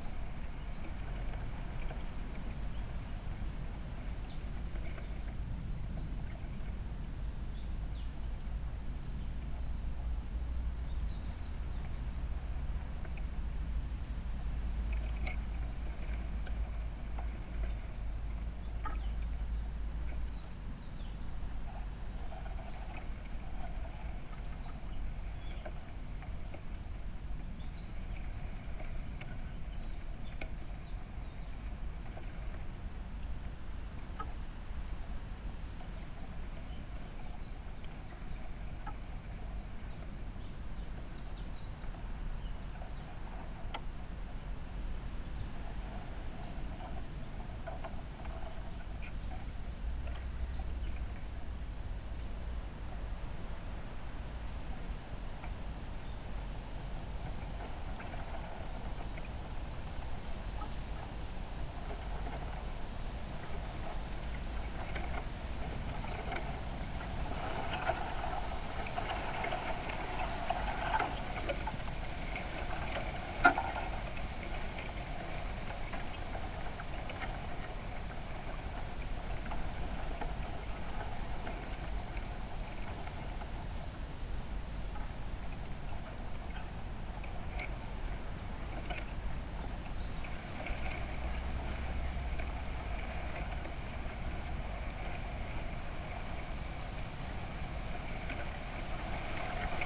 미조항 대왕태나무 2번 방문 Giant bamboo 2nd visit
미조항 대왕태나무 2번 방문_Giant bamboo 2nd visit